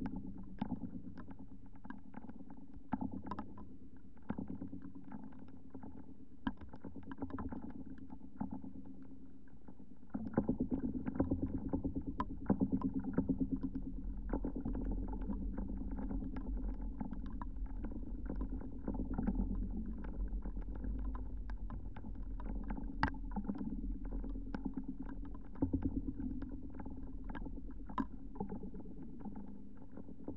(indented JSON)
{
  "title": "Sudeikių sen., Lithuania, paracord installed",
  "date": "2016-12-24 15:40:00",
  "description": "christmas eve listening to paracord installation while drinking green tea in the wood. 15 m long paracord rope tightened between two trees with contacy microphobes atached. light snow.",
  "latitude": "55.53",
  "longitude": "25.60",
  "altitude": "114",
  "timezone": "GMT+1"
}